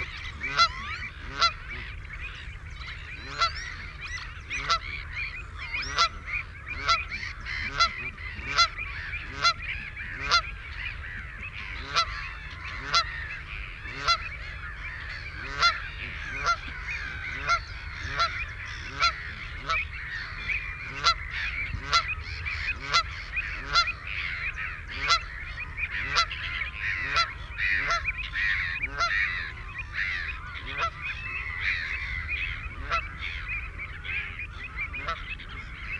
Stone Cottages, Woodbridge, UK - Belpers Lagoon soundscape ...
Belper's Lagoon soundscape ... RSPB Havergate Island ... fixed parabolic to cassette recorder ... bird calls ... song from ... canada goose ... shelduck ... chiffchaff ... avocet ... lapwing ... oystercatcher ... redshank ... ringed plover ... black-headed gull ... herring gull ... back ground noise from planes ... distant ships ...
13 April 2004, 07:00